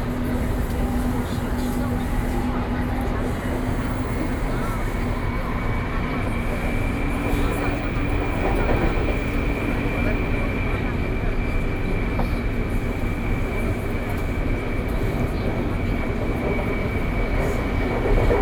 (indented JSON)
{
  "title": "Taipei, Taiwan - Take the MRT",
  "date": "2012-12-05 20:56:00",
  "latitude": "25.08",
  "longitude": "121.52",
  "altitude": "13",
  "timezone": "Asia/Taipei"
}